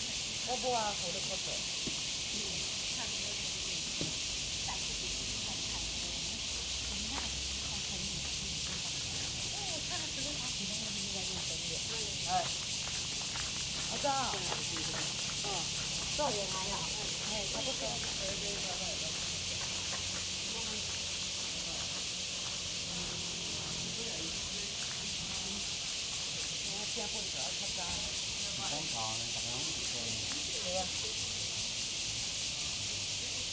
Fuyang Eco Park, 大安區, 台北市 - at the park entrance
at the park entrance, Cicadas cry, Footsteps, Pebbles on the ground
Zoom H2n MS+XY
17 July 2015, 08:06